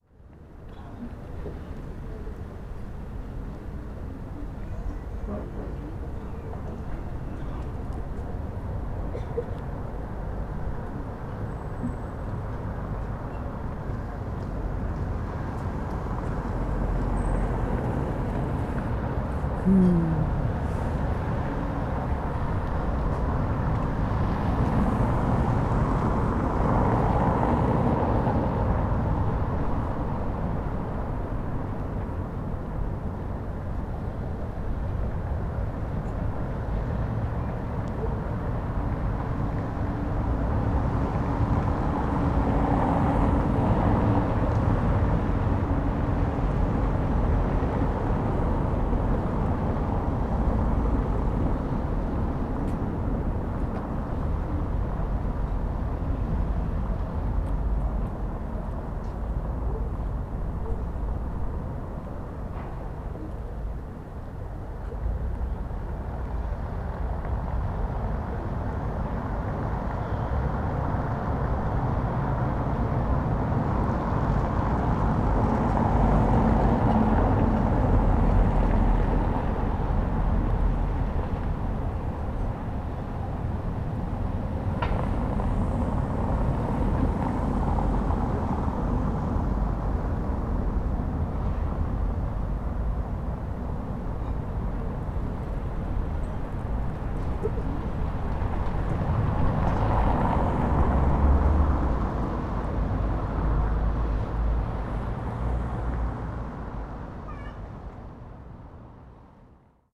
bergen: marktstraße - the city, the country & me: cobbled road
cars passing on the cobbled road
the city, the country & me: march 5, 2013